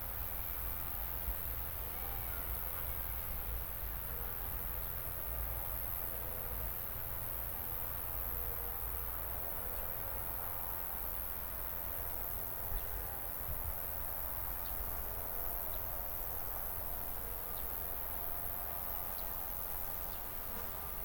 Srem, old slabbed road to the hospital - crickets, bells and ambulance
crickets and birds enjoying and mating on the summer morning, distant church bells, gentle wind, sliced with a ambulance springing out of the nearby hospital
12 August 2012, 11:02